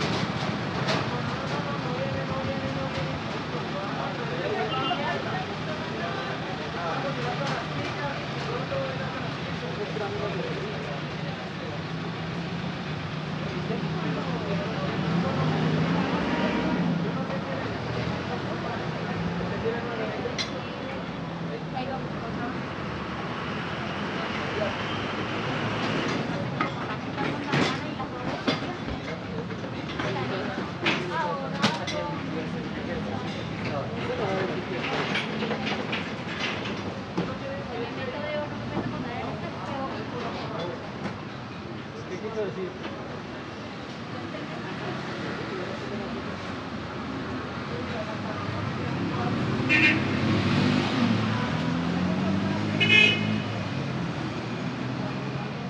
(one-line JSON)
{"title": "Cl., Bogotá, Colombia - Leonardellos Pizza", "date": "2021-05-13 14:31:00", "description": "Leonardelos pizza. Northwest of the capital. Double track corner. On 167th street. Traffic of cars and buses accelerating, a car alarm, horns, people's voices, fragments of people's conversations, truck engines, car whistles, and people unloading objects that appear to be restaurant material.", "latitude": "4.75", "longitude": "-74.06", "altitude": "2559", "timezone": "America/Bogota"}